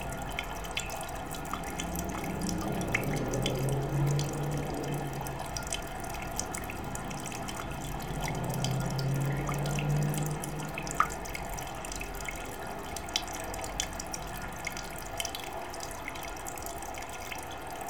Stalos, Crete, hotel pool
hotel pool water system
Stalos, Greece